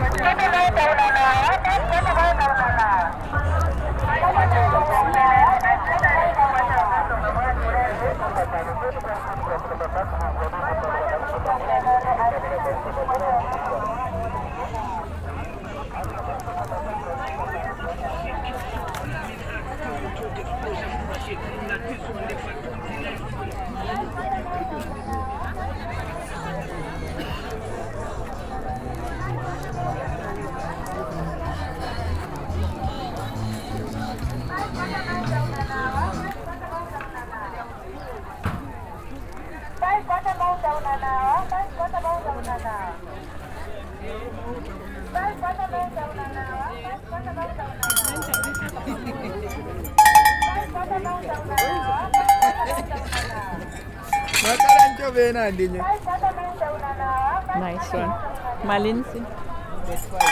Street Market, Choma, Zambia - Cow bells
discovering a trader selling bells for cattle...
Southern Province, Zambia, 13 August, ~12:00